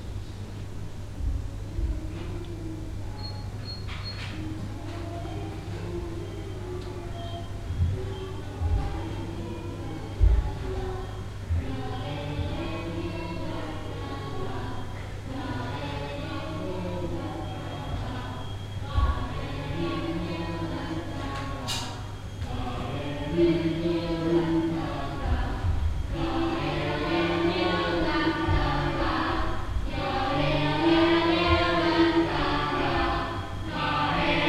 inside the 2nd floor of the takasaki ballet studio. a class of young students rehearsing a chorus with their teacher - background the permanent wind of the aircondition and steps in the floor
international city scapes - social ambiences and topographic field recordings